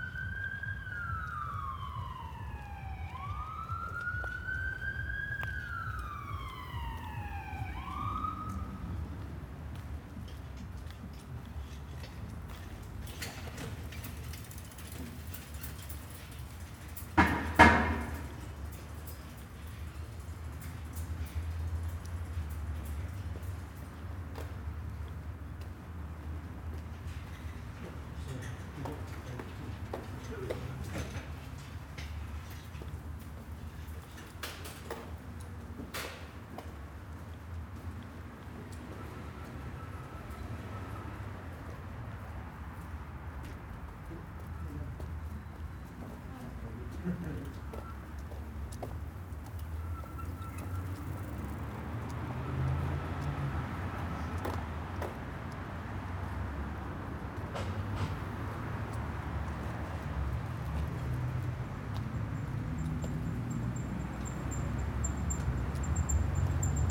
Underpass beneath ring road, Headington, Oxford, UK - Going under the ring road around Oxford
This is the sound of the underpass which travels beneath the busy ring road around Oxford. The underpass is favoured by cyclists who cannot safely cross the insanely busy A road above, and by pedestrians. You can hear the parallel stacks of traffic; the heavy cars and lorries above and the delicate bicycle pings and rattles below. You can also hear the recorder bouncing a bit on me as I walked, and the unsatisfactory clicks of my holding the little Naiant X-X microphones I used in my little woolly mittens. Must make a better/quieter rig for those.